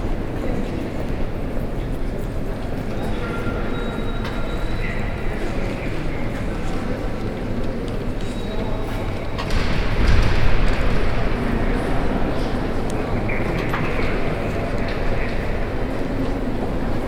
Brussels, Palais de Justice / Courthouse.